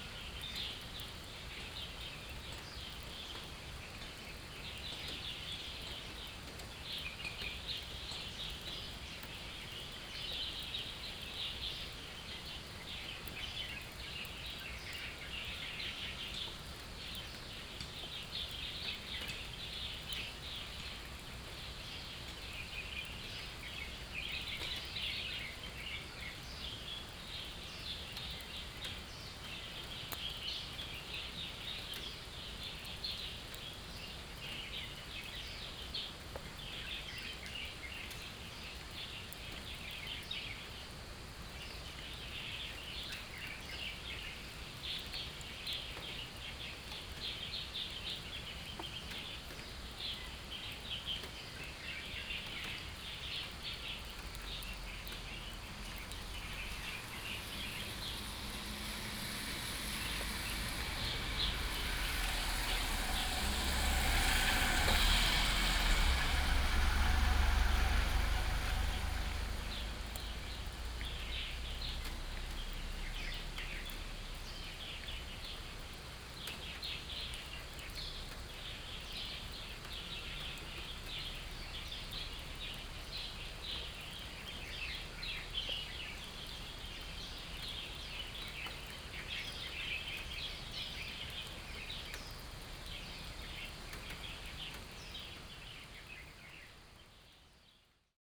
成功橋, 埔里鎮成功里, Puli Township - Under the banyan tree
Under the banyan tree, Birds call, Rain drops, Traffic Sound
Nantou County, Taiwan, 15 September 2016, 06:20